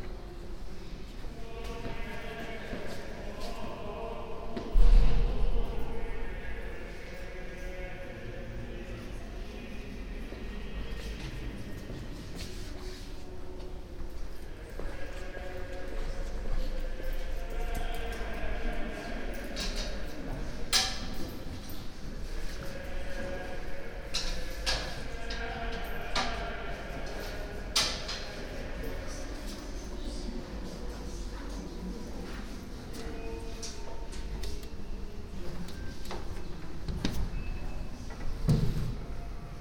Inside the cathedral Notre Dame. The sound of monk singing and steps of visitors and banging of the doors.
international city scapes - topographic field recordings and social ambiences